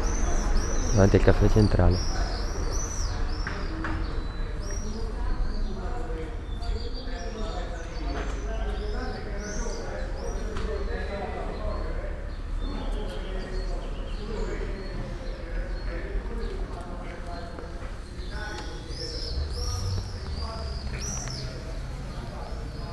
Ambience of the village, people chatting, car passing, birds.
(Binaural: Dpa4060 into Shure FP24 into Sony PCM-D100)
Serra De Conti AN, Italy, 26 May 2018, 10:06